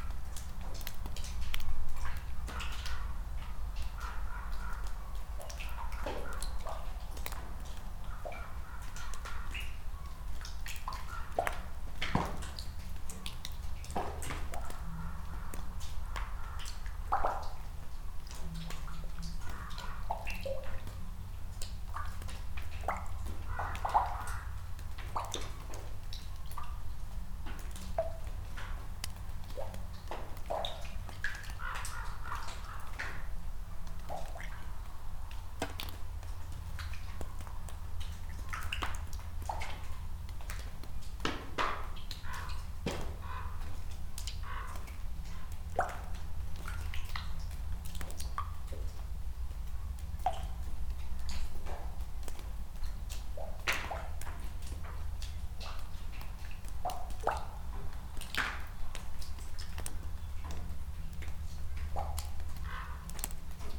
Vaikutenai, Lithuania, abandoned farm ambience
big abandoned farm from soviet times, the roof is half deteriorated, trash everywhere...